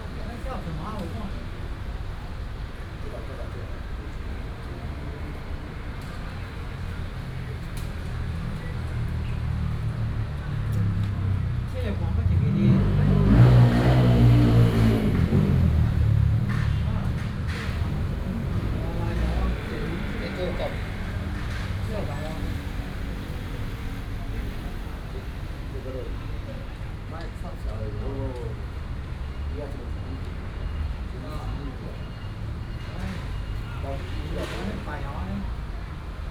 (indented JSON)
{
  "title": "四維公園, 板橋區, New Taipei City - in the Park",
  "date": "2015-07-29 15:37:00",
  "description": "in the Park, Some old people are playing chess, Traffic Sound",
  "latitude": "25.02",
  "longitude": "121.46",
  "altitude": "12",
  "timezone": "Asia/Taipei"
}